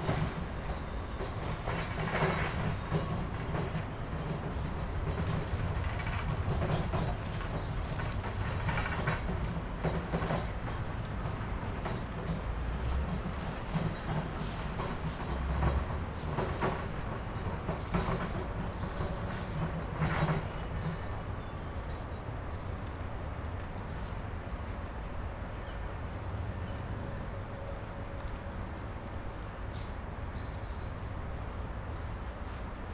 The Binckhorst Mapping Project
2 December 2011, 15:37